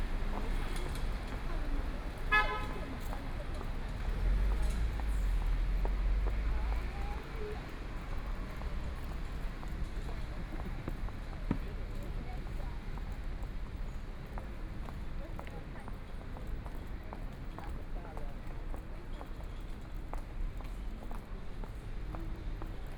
walking in the Street, Binaural recording, Zoom H6+ Soundman OKM II